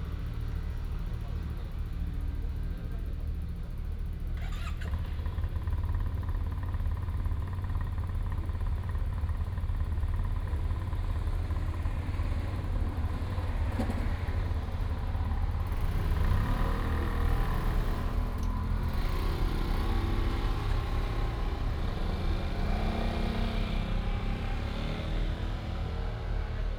中正路69號, Nanzhuang Township - heavy locomotives
Next to the road, Holiday early morning, Very heavy locomotives on this highway, Binaural recordings, Sony PCM D100+ Soundman OKM II